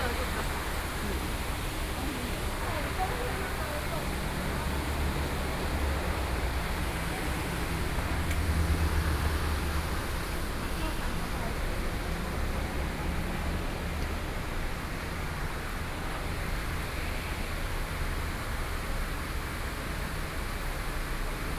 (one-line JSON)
{"title": "Beitou Library, Taipei City - Beitou Library", "date": "2012-10-26 15:31:00", "latitude": "25.14", "longitude": "121.51", "altitude": "26", "timezone": "Asia/Taipei"}